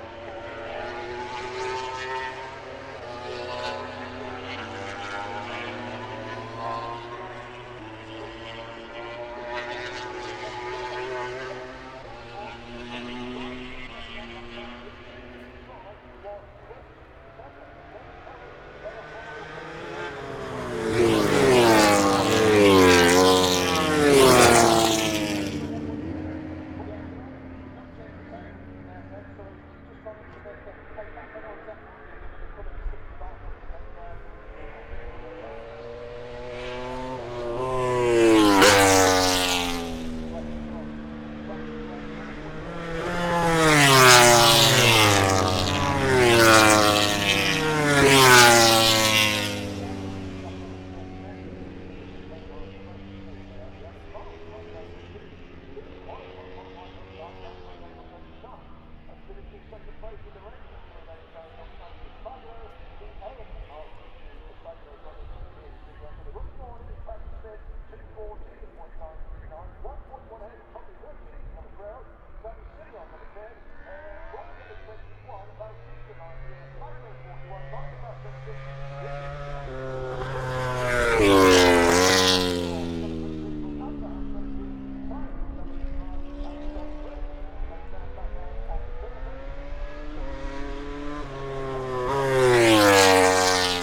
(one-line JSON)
{"title": "Silverstone Circuit, Towcester, UK - British Motorcycle Grand Prix 2018 ... moto grand prix ...", "date": "2018-08-25 14:10:00", "description": "British Motorcycle Grand Prix 2018 ... moto grand prix ... qualifying one ... maggotts ... lavalier mics clipped to baseball cap ...", "latitude": "52.07", "longitude": "-1.01", "altitude": "156", "timezone": "Europe/London"}